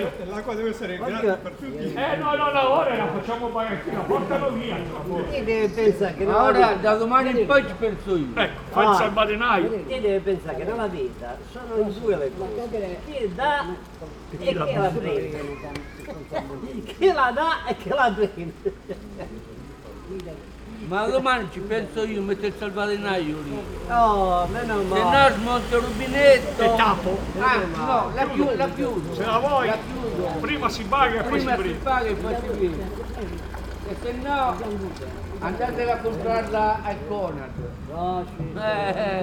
Via Felice Cavallotti, Massa MS, Italia - La Fontana del Mascherone
Ogni giorno gli uomini e le donne della borgata si ritrovano alla Fontana del Mascherone. Più o meno a qualsiasi ora c'è qualcuno. Parlano, discutono, ridono, commentano i fatti della borgata e quello che succede nel mondo e nella loro vita.